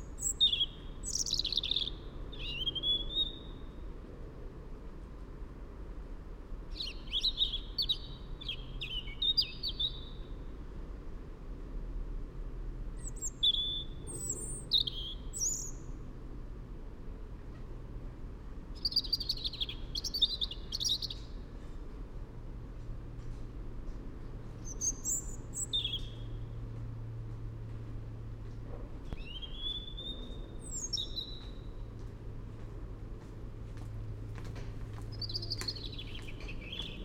Millbank, Westminster, London, UK - A Robin in a tree.
I just acquired a parabolic reflector and wanted to try recording this Robin I hear everyday at work. It sits in the same tree without fail, every morning and evening. (and sometimes all day) Recorded into mixpre6 with Mikro-Usi